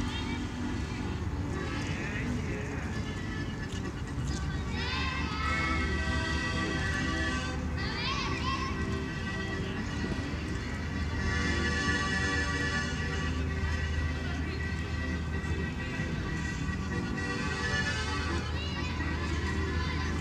Panevėžys, Lithuania, central square
Renovated central square of Panevezys city. People, christmas tree...
Panevėžio apskritis, Lietuva, November 2020